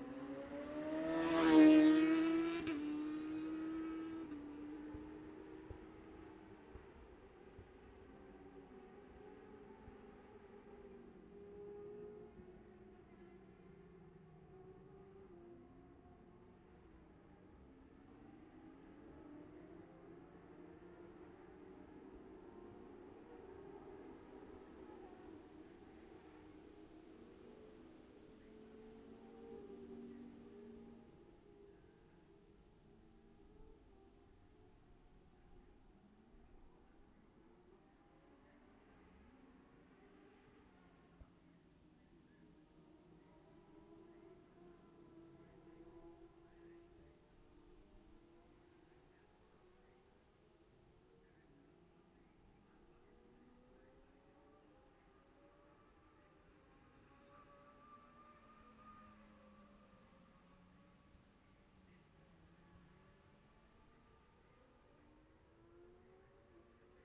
Jacksons Ln, Scarborough, UK - Gold Cup 2020 ...
Gold Cup 2020 ... 600 evens practice ... Memorial Out ... dpa 4060s to Zoom H5 clipped to bag ...
11 September, 10:29